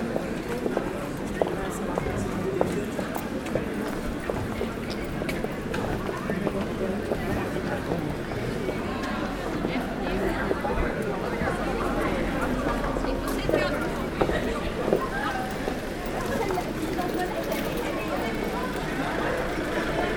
Chartres, France - A pedestrian way
People walking in a beautiful and pleasant pedestrian way.
30 December